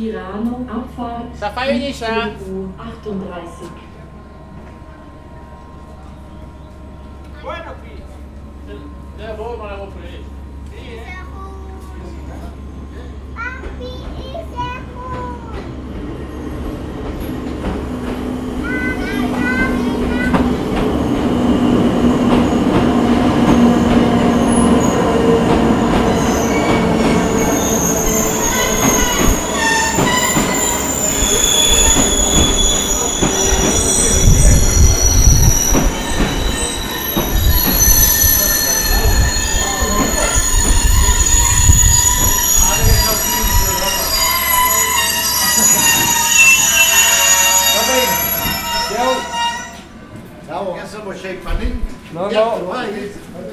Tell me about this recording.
Rhätische Bahn, Weltkulturerbe, Poschiavo, Puschlav, Südbünden, Die Verabschiedung ist ciao ciao